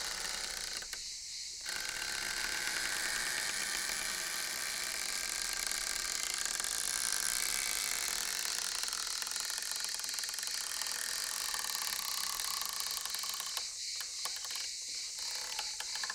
Ulupınar Mahallesi, Çıralı Yolu, Kemer/Antalya, Turkey - Creaky door
Aylak Yaşam Camp, creaky door sound resembling a cicada
28 July, 12:23